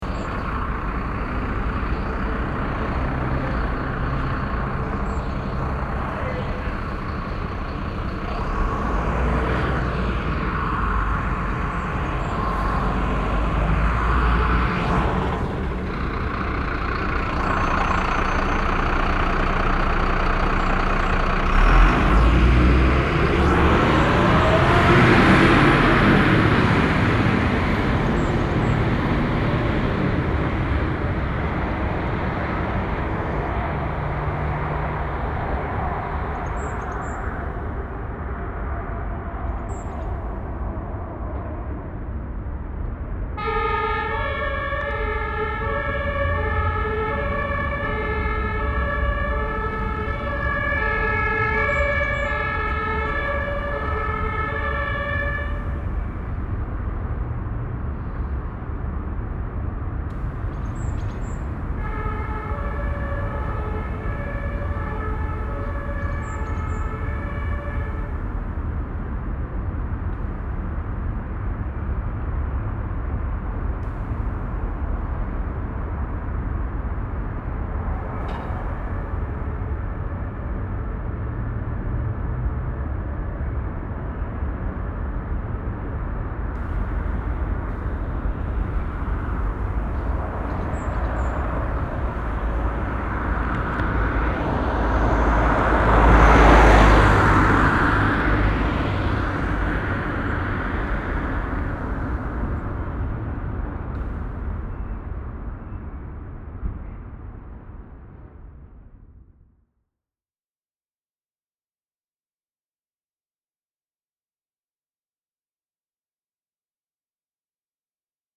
Ostviertel, Essen, Deutschland - essen, eiserne hand, fire brigade
An der Feuerwache der Berufsfeuerwehr. Der Klang des Öffnens eines Garagentors, das Starten des Einsatzwagens, Verlassen der Garagen, Abfahrt und Einschalten des Signalhorns im Wegfahren.
At the fire brigade. The sound of the opening of the garage door, the starting of the wagon machine, the departure and start of the signal horn fading away.
Projekt - Stadtklang//: Hörorte - topographic field recordings and social ambiences